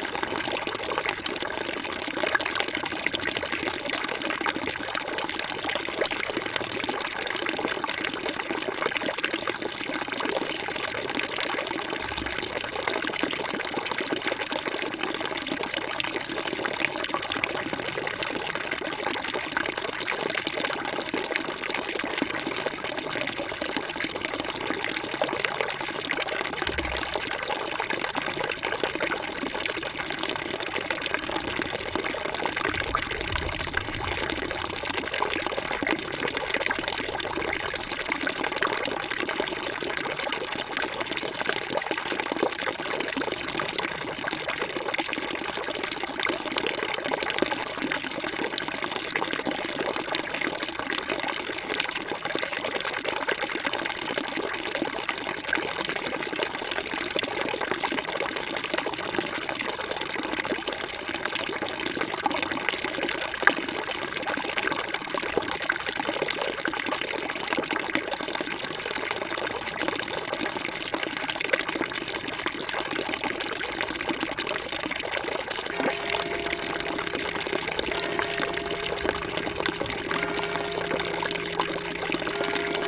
Rue de l'Église, Chindrieux, France - Fontaine
La fontaine et son bassin de 1870 en face de l'église de Chindrieux, sonnerie du clocher à 18h, l'orage commence à gronder, passage d'un 50cc qui peine dans a côte...
France métropolitaine, France, 14 August 2022